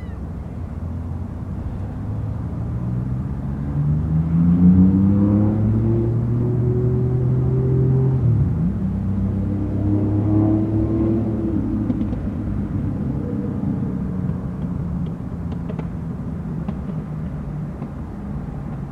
Fort Calgary Park ambience
city sounds heard in Fort Calgary Park
Alberta, Canada